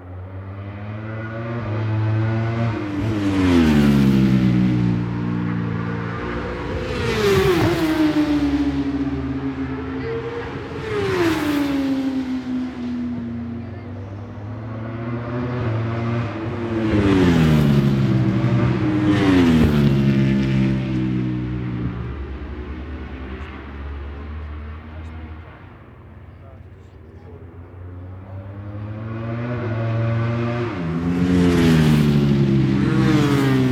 Brands Hatch GP Circuit, West Kingsdown, Longfield, UK - wsb 2004 ... superbike practice ...
wsb 2004 ... superbike practice ... one point stereo mic to minidisk ... time approx ...
31 July 2004